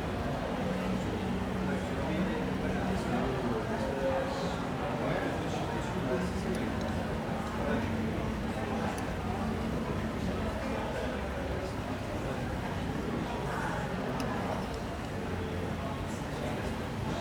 Evening on Paris' hotest day so far in 2022. Temperatures reached 40C much earlier in the year than usual.